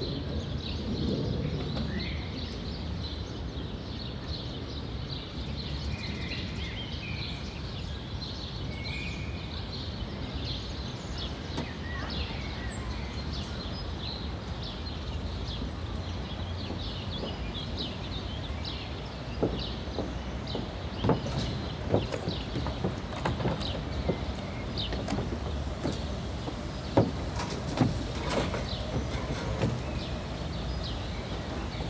Großer Tiergarten, Straße des 17. Juni, Berlin - Berlin. Tiergarten – Am Neuen See
Standort: Bootssteg. Blick Richtung Nordwest.
Kurzbeschreibung: Café-Gäste, Vogelgezwitscher, Reinigung von Booten und Steg, Ausflügler.
Field Recording für die Publikation von Gerhard Paul, Ralph Schock (Hg.) (2013): Sound des Jahrhunderts. Geräusche, Töne, Stimmen - 1889 bis heute (Buch, DVD). Bonn: Bundeszentrale für politische Bildung. ISBN: 978-3-8389-7096-7